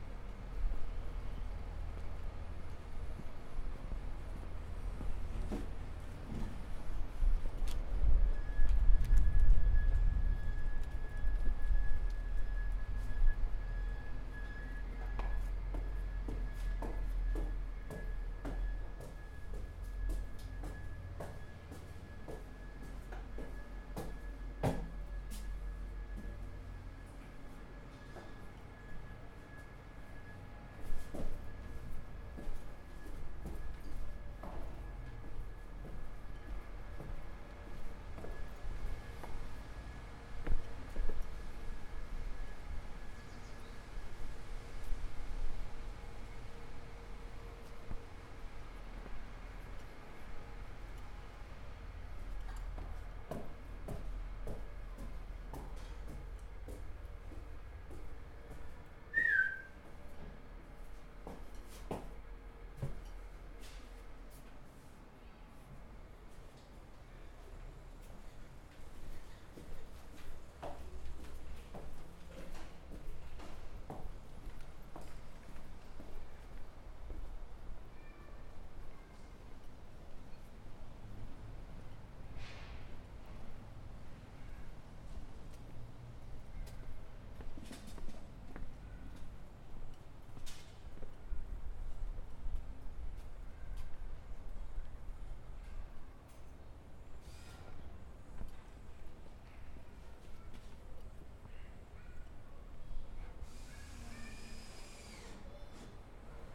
Karel du Jardinstraat, Amsterdam, Nederland - Trap portiek/ Porch stairs
(description in English below)
Een beetje spelen met geluid maakt de omgeving een stuk spannender. Zoek de plekjes op met een bijzondere akoestiek, zoals deze portiek. Om bij de woningen te komen moet je eerst de trap omhoog. De ruimte vraagt erom om even te fluiten of een oehoe geroep te maken.
Playing with sound makes the environment a lot more exciting. Find the spots with a special acoustic, like this porch. To reach the house you have to walk up the stairs. The space begs to make a whistle or an echo-sound.
Amsterdam, The Netherlands, October 2013